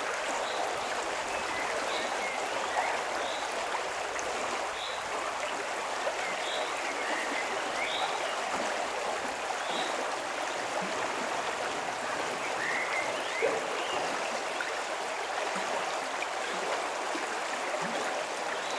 Klosterberg, Bad Berka, Deutschland - Beneath the Ilm Bridge #3
*Recording technique: ORTF.
*SOUND: Goose call, bird calls in separate channels, human activity, occasional vehicles.
The Ilm is a 128.7 kilometers (80.0 mi) long river in Thuringia, Germany. It is a left tributary of the Saale, into which it flows in Großheringen near Bad Kösen.
Towns along the Ilm are Ilmenau, Stadtilm, Kranichfeld, Bad Berka, Weimar, Apolda and Bad Sulza.
In the valley of Ilm river runs the federal motorway 87 from Ilmenau to Leipzig and two railways: the Thuringian Railway between Großheringen and Weimar and the Weimar–Kranichfeld railway. Part of the Nuremberg–Erfurt high-speed railway also runs through the upper part of the valley near Ilmenau.
*Recording and monitoring gear: Zoom F4 Field Recorder, RODE M5 MP, Beyerdynamic DT 770 PRO/ DT 1990 PRO.
Landkreis Weimarer Land, Thüringen, Deutschland, 12 May 2020, 15:49